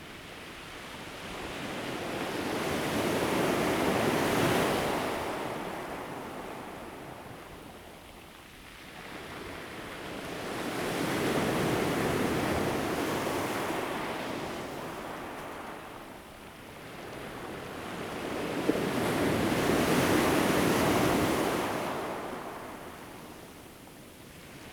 三仙里, Chenggong Township - sound of the waves
Sound of the waves, Helicopter
Zoom H2n MS+XY